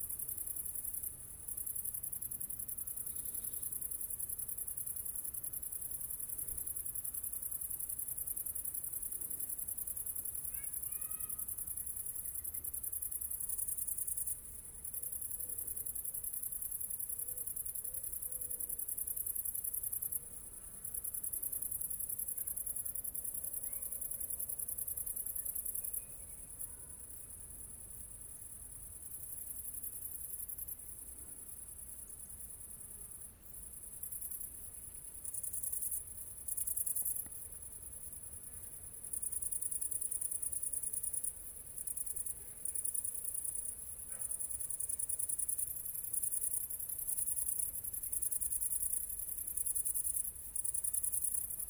Mont-Saint-Guibert, Belgique - Criquets
Criquets in an orchard, in a very quiet landscape.